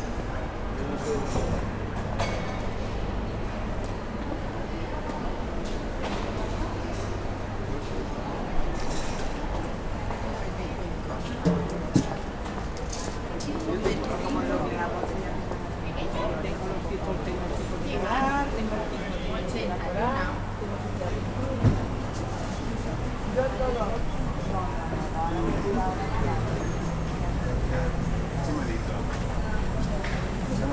Mercat de Galvany
Sound enviroment of a food market
Barcelona, Spain, 13 January 2011, 13:27